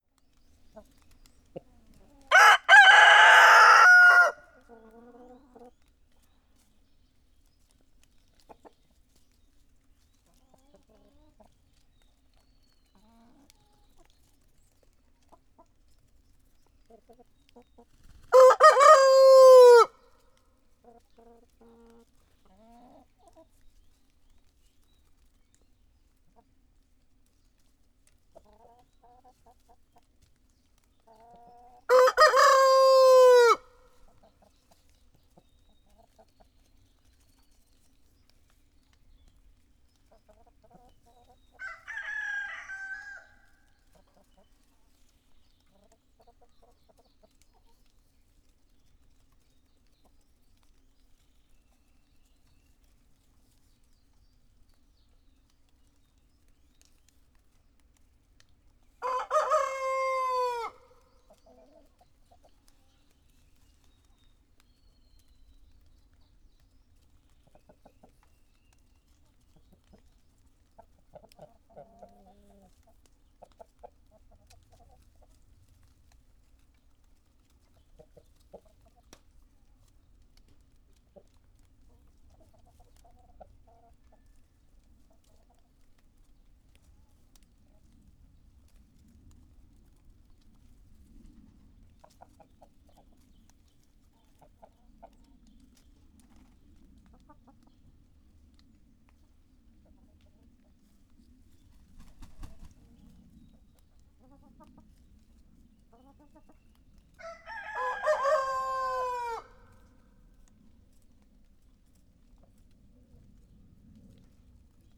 Netzow, Templin, Deutschland - hen-coop, roosters
village Netzow, hen-coop, impressive big roosters carking
(Sony PCM D50, Primo EM172)
Templin, Germany, 18 December 2016